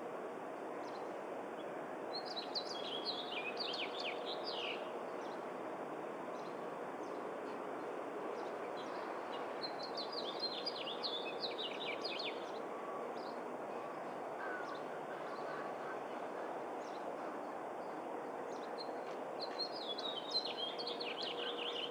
East Garfield Park - birds
birds, song, April
Chicago, IL, USA, 13 April 2010